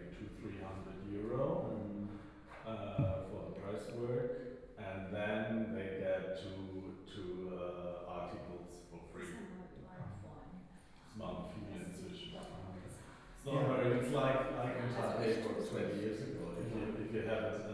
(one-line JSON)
{"title": "Apartment der kunst, Munich - In the gallery", "date": "2014-05-10 14:45:00", "description": "In the gallery, Openning", "latitude": "48.15", "longitude": "11.58", "altitude": "519", "timezone": "Europe/Berlin"}